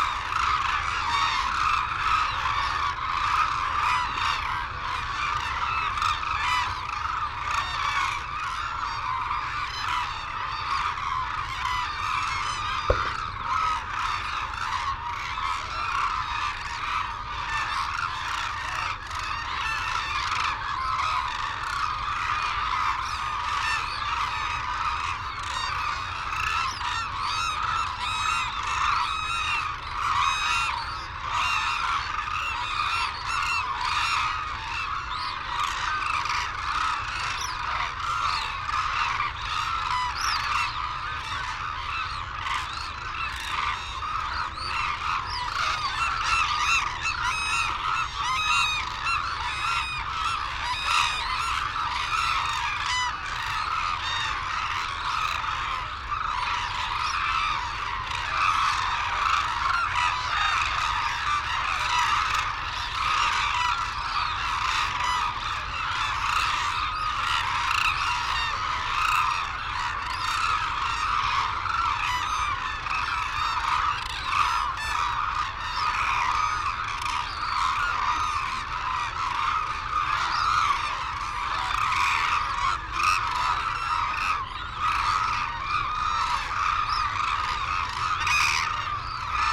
Sho, Izumi, Kagoshima Prefecture, Japan - Crane soundscape ...
Arasaki Crane Centre ... calls and flight calls from white naped cranes and hooded cranes ... Telinga ProDAT 5 to Sony Minidisk ... wheezing whistles from young birds ...